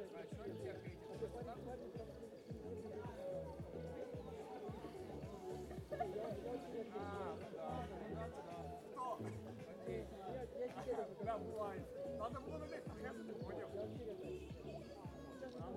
провулок Прибузький, Вінниця, Вінницька область, Україна - Alley12,7sound16makeshiftbeach
Ukraine / Vinnytsia / project Alley 12,7 / sound #16 / makeshift beach